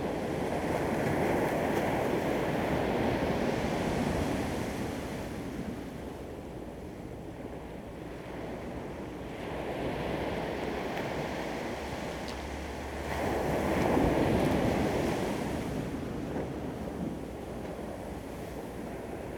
台東海濱公園, Taitung City - sound of the waves
Waterfront Park, sound of the waves, Beach at night, The sound of aircraft flying
Zoom H2n MS + XY